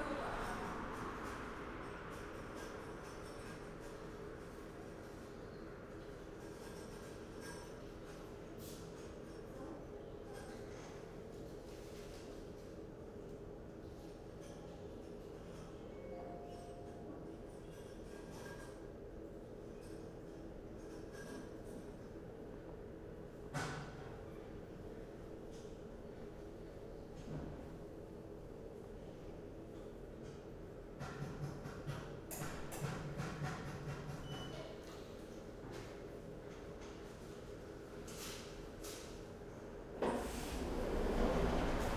post office Siemianowice closing
(Sony PCM D50)
Siemianowice Śląskie, Poland, 21 May 2019